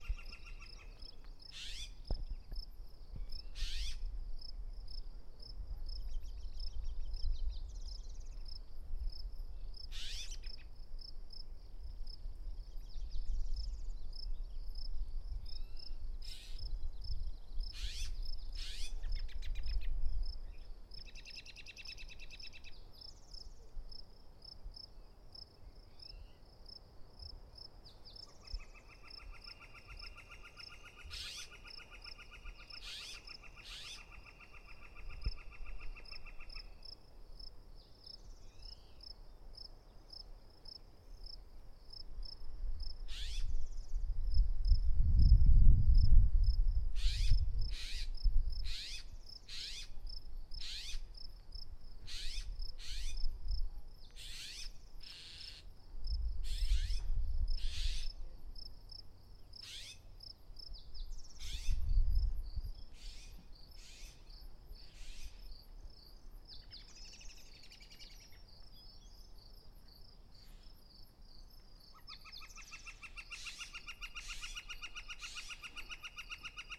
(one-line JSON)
{"title": "Paseo Rd, Colorado Springs, CO, USA - Palmer Park evening chorus", "date": "2018-05-14 18:12:00", "description": "Woodhouse's Scrub-Jays, Spotted Towhees, Robins, Flickers, crickets and other birds on a breezy evening in Palmer Park", "latitude": "38.88", "longitude": "-104.78", "altitude": "1935", "timezone": "America/Denver"}